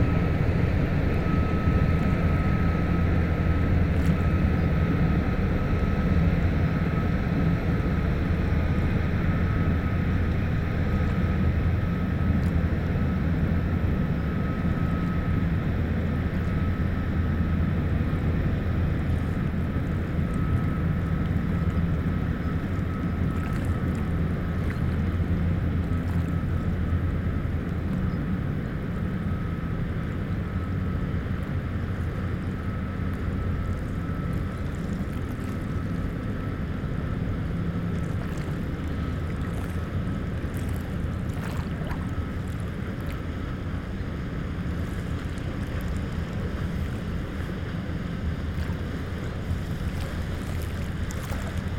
Two boats are passing on the Albert canal. The first one is small and slow. The second one is big and makes big waves. It's the Duchesse from Zwijndrecht. IMO number of this boat is 244660540 and it's an oil tanker. If you be very very careful hearing this second boat, you will hear, in the cabin, the small dog who hates me !! Poor driver ;-)
Riemst, Belgium, 20 January 2018